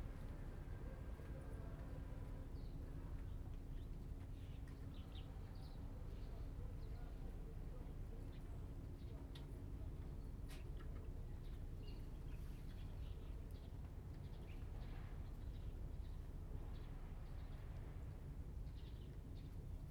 北寮漁港, Huxi Township - In the fishing port pier
In the fishing port pier, Birds singing, Small village
Zoom H2n MS +XY
Huxi Township, 澎14鄉道